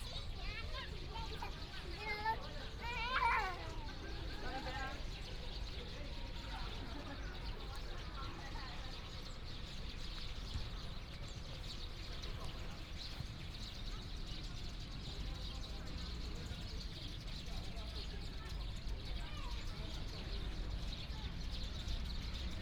Afternoon sitting in the park, Traffic Sound, Sunny weather
Please turn up the volume a little
Binaural recordings, Sony PCM D100 + Soundman OKM II